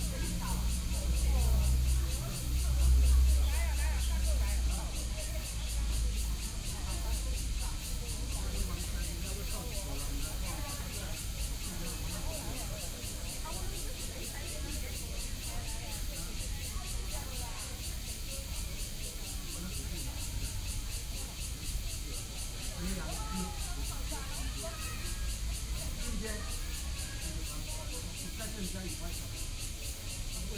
HutoushanPark - In the Park
Chat between elderly, Sony PCM D50 + Soundman OKM II